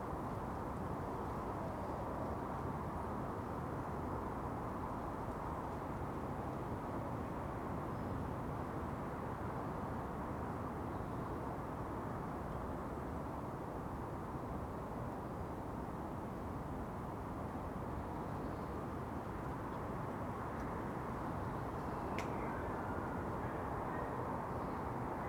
Contención Island Day 41 inner southwest - Walking to the sounds of Contención Island Day 41 Sunday February 14th
The Drive Westfield Drive Fernville Road Park Villas
Road noise
drifted in on the wind
Little moves
in the cold-gripped cul-de-sac